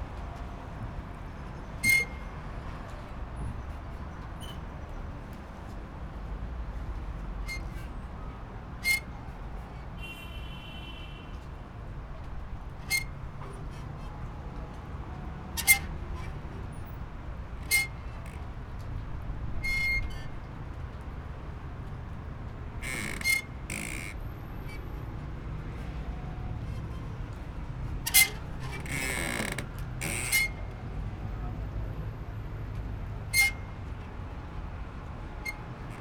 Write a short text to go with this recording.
a spring for securing a yacht to the pier. creaking as the boat bobs on gentle waves